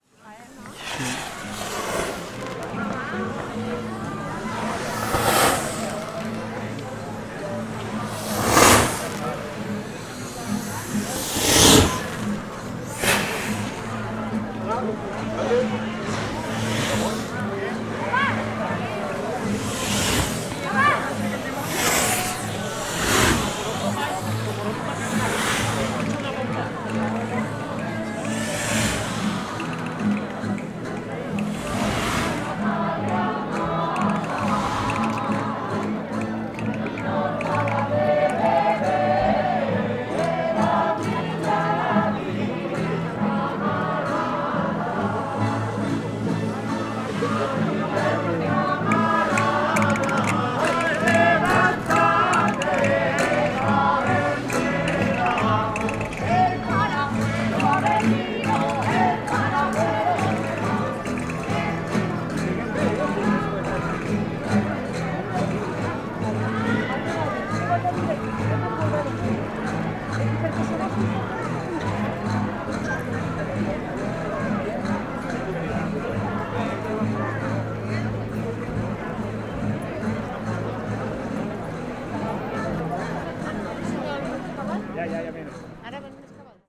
Av. de Castellón, Villafranca del Cid, Castellón, Espanya - Ronda de Sant Antoni 2022
La Ronda de Sant Antoni passa per l'avinguda Castelló. S'escolten els coets dels dimonis i després passa la rondalla i els cantadors de jotes (d'esquerra a dreta).
Castelló / Castellón, Comunitat Valenciana, España, 27 February 2022, ~12:00